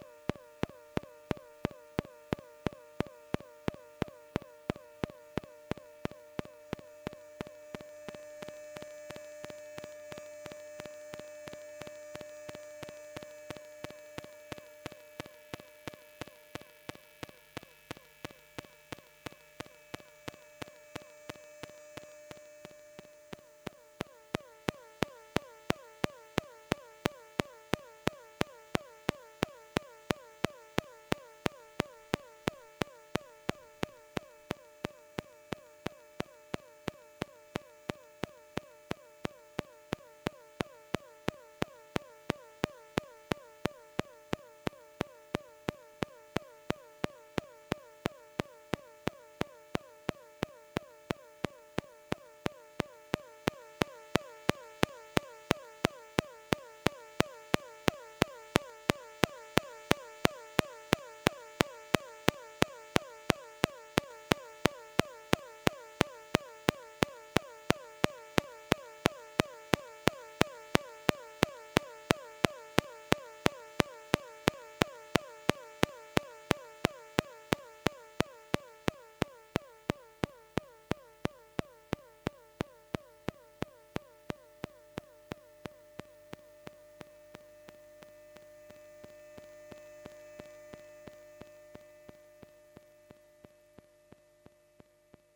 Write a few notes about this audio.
Electromagnetic field song of a railway. It's below the rails and for sure, it could be considered as quite strange, as I don't know exactly what's doing this strange moving sound, the second part of this small recording is louder than the beginning.